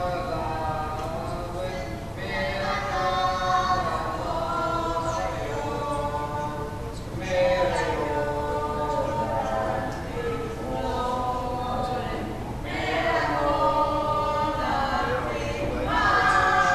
Neustadt-Nord, Cologne, Germany - Neighbours singing
Neighbours singing in the backyard.